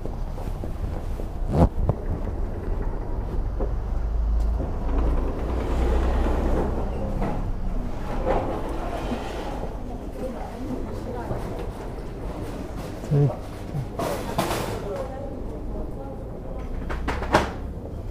Olsztyn, Polska - Supermarket
Doing shopping at housing estate supermarket.
Polska, European Union, 1 March 2013, 15:14